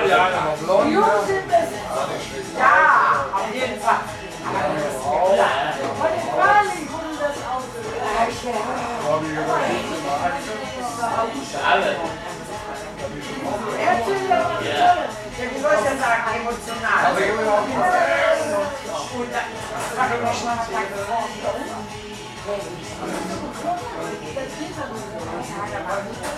gelsenkirchen-horst, schlosstrasse - adria schänke